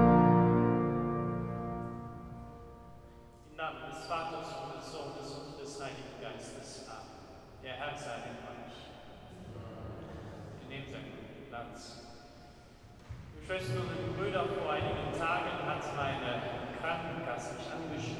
Altenberger Dom - church service
evening church service at altenberger dom. the priest talks about insurances, old-age plans and eternity. it's a bit confused...